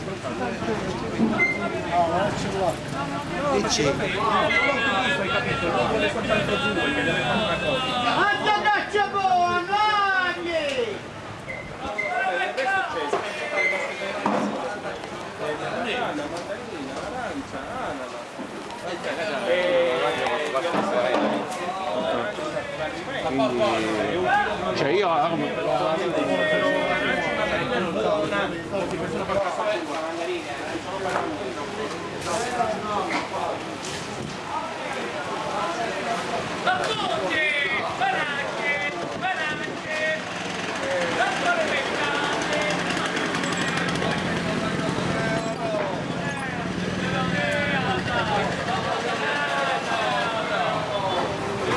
typical city market, wonder of the senses...march 2009
SIC, Italia